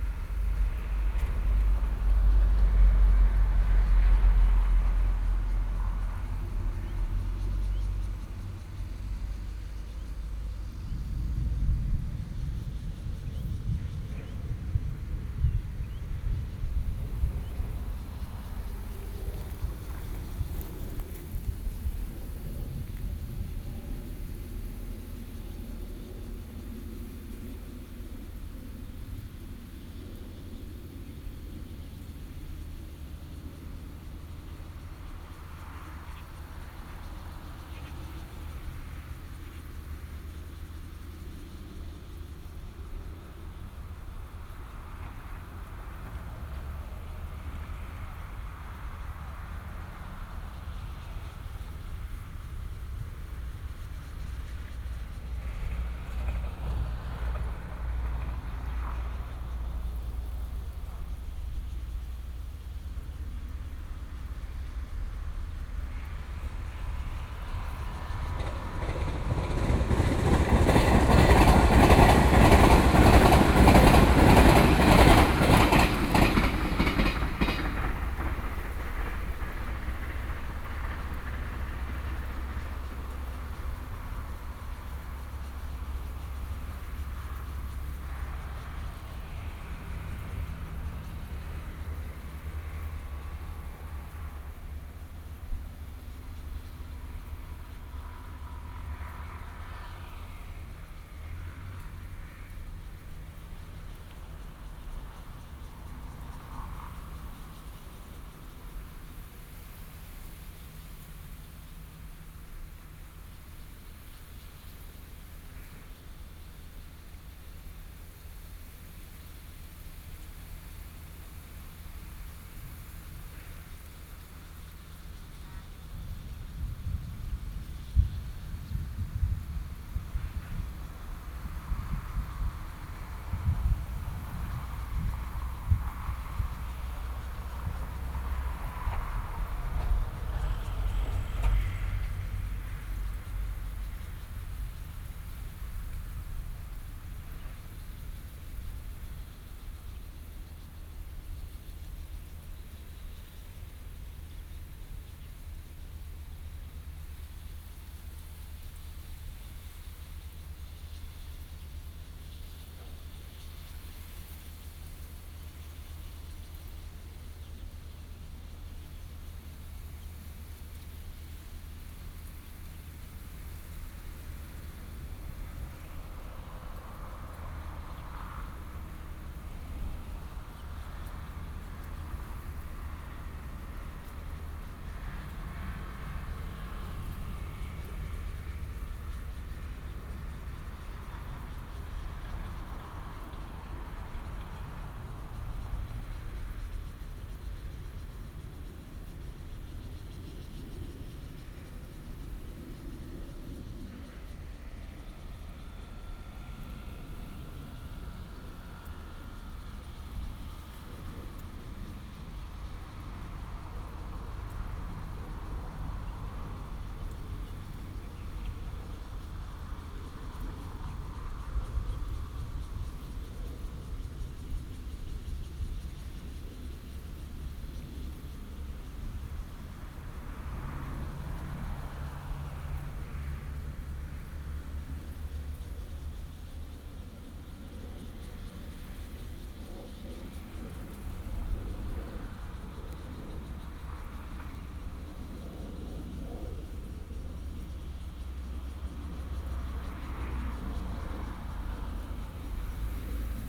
Fugang, 楊梅鎮 Taoyuan County - Hot noon
Distant thunder hit, Aircraft flying through, Train traveling through, Sony PCM D50 + Soundman OKM II
楊梅鎮 Taoyuan County, Taiwan, 14 August